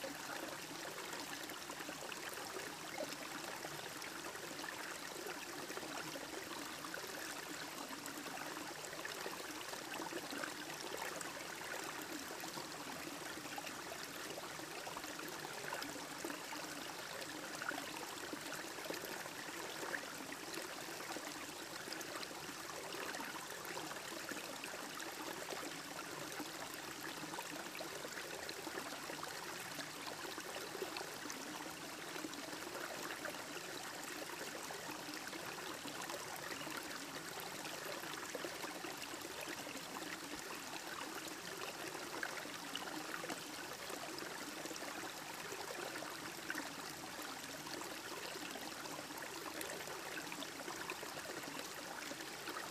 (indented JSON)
{
  "title": "Cascade trail creek, Oakland, Ca, lake Chabot - Cascade trail creek",
  "date": "2011-05-05 14:20:00",
  "description": "cascade trail creek, spring 2011",
  "latitude": "37.74",
  "longitude": "-122.11",
  "altitude": "104",
  "timezone": "America/Los_Angeles"
}